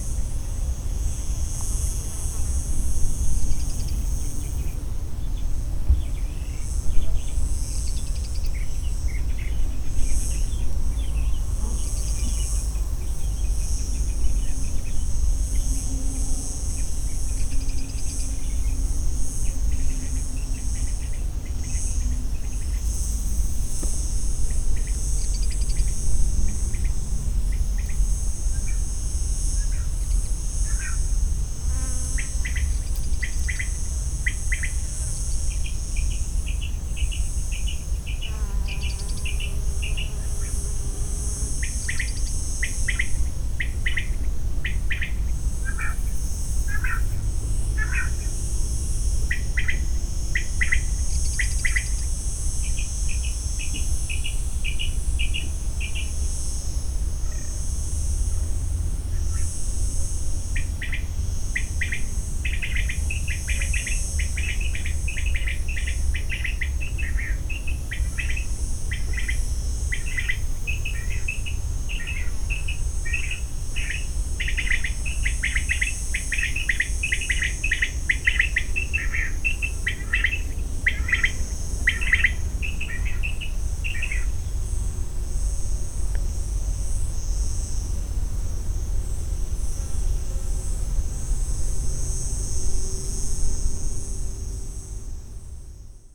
unknown birds chirping in the bushes, insects buzzing along (roland r-07)
Tramuntana, Malta, 24 September 2020, ~12:00